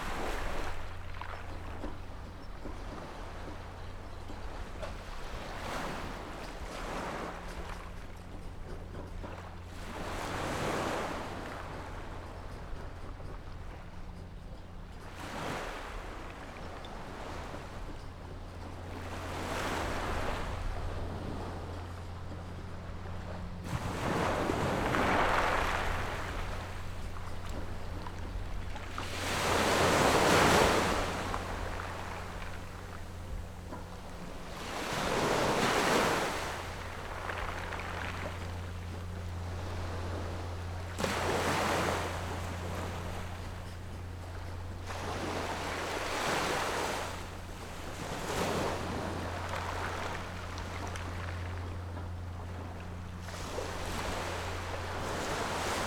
芙蓉澳, Nangan Township - Small pier
Small beach, Small pier, Birds singing, Sound of the waves
Zoom H6+ Rode NT4